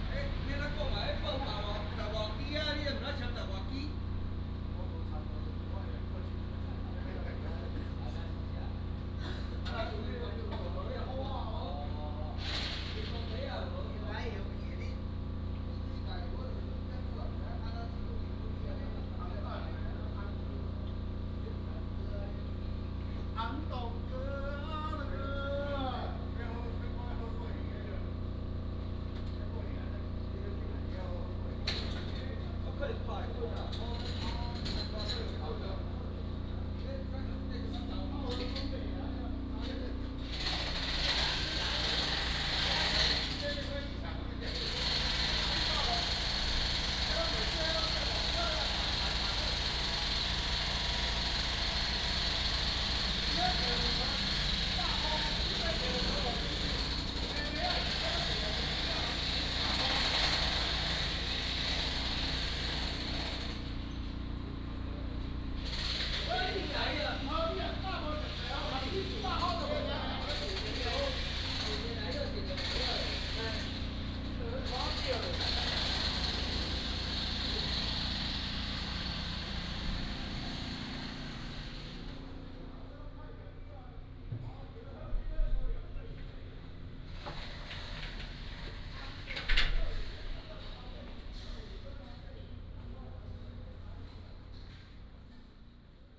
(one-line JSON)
{"title": "Yancheng District, Kaohsiung City - Small alley", "date": "2015-01-29 17:12:00", "description": "Small alley, Sewer Construction", "latitude": "22.62", "longitude": "120.28", "altitude": "11", "timezone": "Asia/Taipei"}